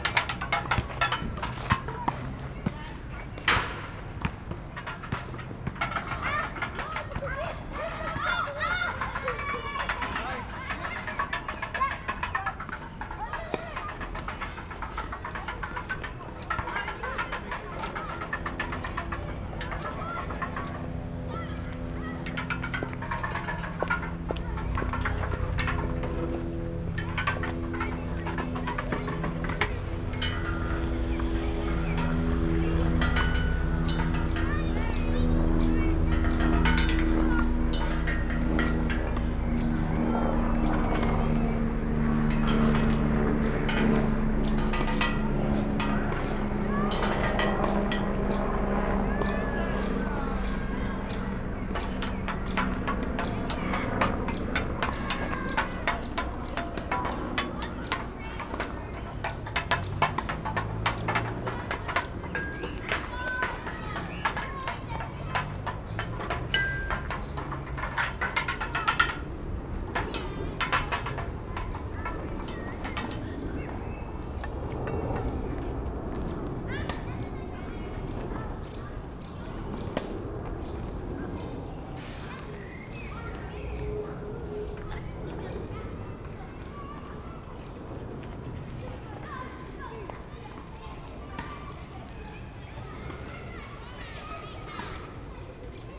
London Borough of Hammersmith and Fulham, Greater London, UK - Railings
Binaural recording of the railings surrounding the tennis court at Brook Green Park, London.